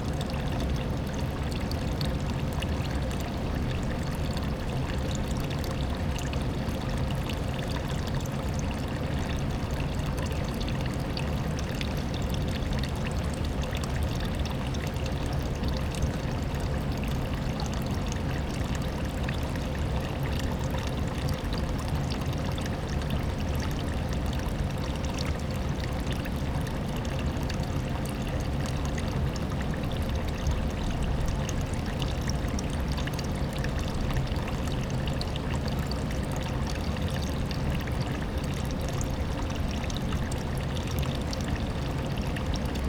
Lithuania, Utena, near the dam
some waterstream falling down near the dams waterfall roaring